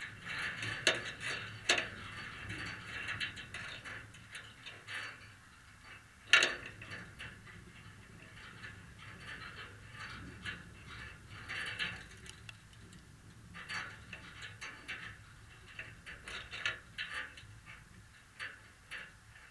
contact microphones on the fence that is in the forest
28 February, 14:55